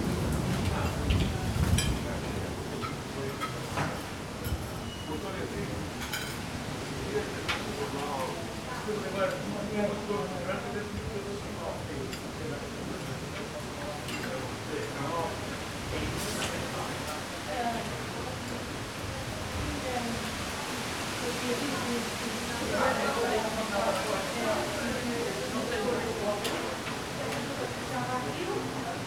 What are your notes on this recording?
recorded in a narrow street. sounds of residents having dinner, watching tv and talking coming from the open windows. a couple of tourists walks by.